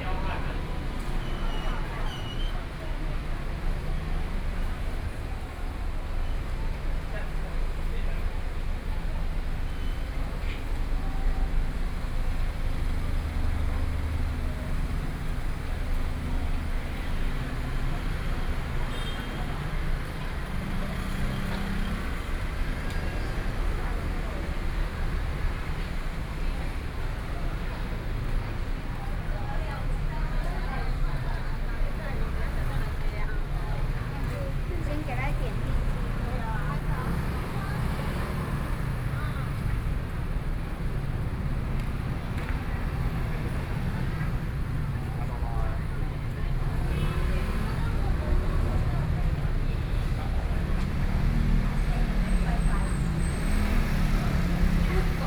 Zhonghua Rd., Hualien City - walking on the Road
walking on the Road, Various shops voices, Tourists, Traffic Sound
Hualien County, Taiwan, 28 August 2014, 20:04